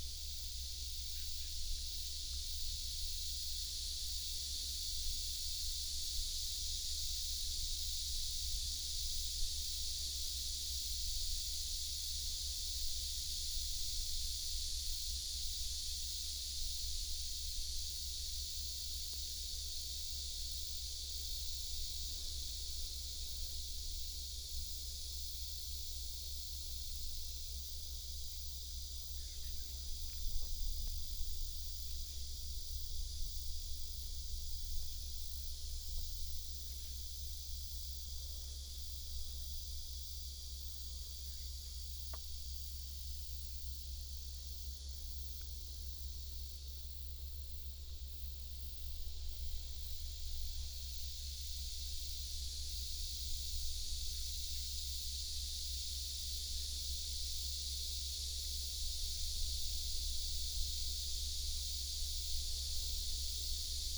五結鄉季新村, Yilan County - In the woods
In the woods, Hot weather, Cicadas, Birdsong